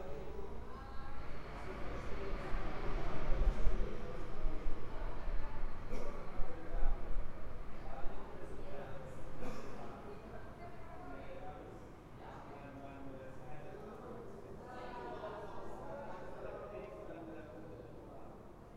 Backyard, Frankfurt am Main, Deutschland - backyardtalk
People talking in the backyard, sign of a pleasant summer.